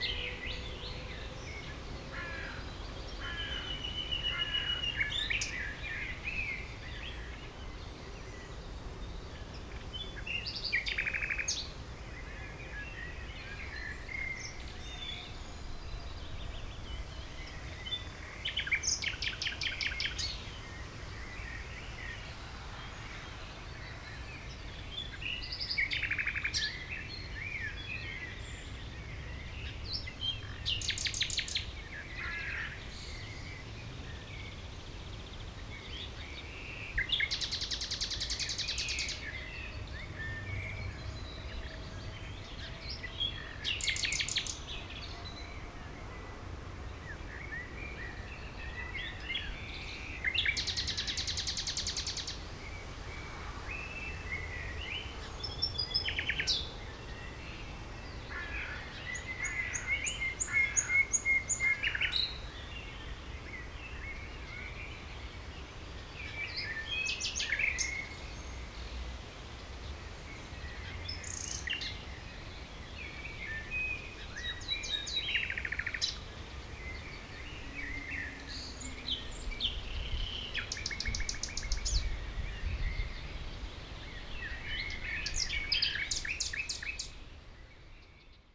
Heidekampgraben, Mauerweg, Berlin - nightingale
nightingale at Mauerweg (former Berlin Wall area)
(Sony PCM D50, Primo EM172)
Berlin, Germany, 4 June